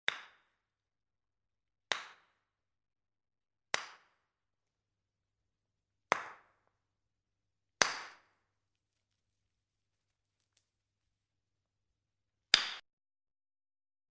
erkrath, neandertal, altes museum, steinzeitwerkstatt - steinzeitwerkstatt - horn auf feuerstein
klänge in der steinzeitwerkstatt des museums neandertal - hier: bearbeitung von horn mit feuerstein
soundmap nrw: social ambiences/ listen to the people - in & outdoor nearfield recordings, listen to the people